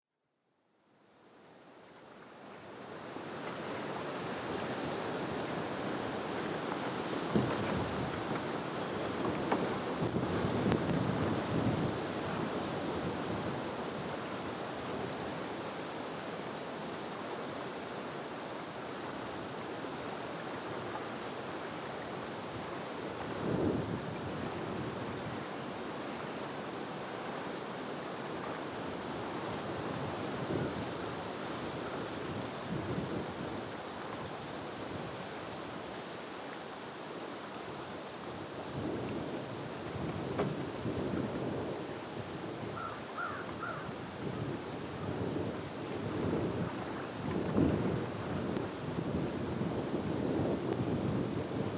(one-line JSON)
{"title": "Savanna Army Depot + Wildlife Refuge - Wind in trees on bluff overlooking the Mississippi River at the former Savanna Army Depot", "date": "2013-03-13 13:15:00", "description": "Recorded at the Black Oak Dune Overlook at the Savanna Army Depot (former) which is being slowly converted into a wildlife refuge. A large, unknown number of unexploded ordnance (artillery shells and grenades mostly from WWI) remain buried in the ground here. Last bits of ice flowed down river, the sound of water lapping at the frozen shore can be heard beneath the gusts and noisy pines.", "latitude": "42.19", "longitude": "-90.30", "altitude": "193", "timezone": "America/Chicago"}